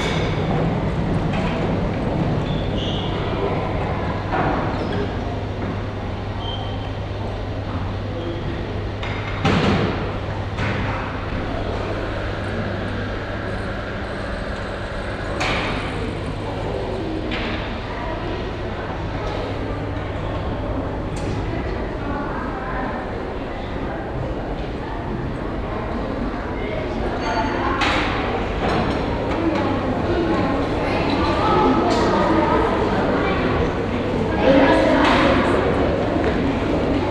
Unterbilk, Düsseldorf, Deutschland - Düsseldorf, Landtag NRW, staircase
At a semicircular staircase inside the Landtag building. The sounds of the lunch preparations from the downhall cafeteria. Some steps up and down the stairs. A group of children.
This recording is part of the exhibition project - sonic states
soundmap nrw - sonic states, social ambiences, art places and topographic field recordings